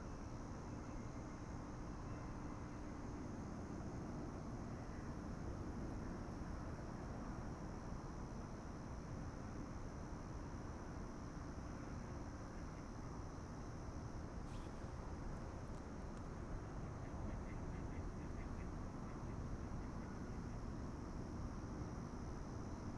琵琶湖, Taitung - Lake night
The park at night, Duck calls, The distant sound of traffic and Sound of the waves, Zoom H6 M/S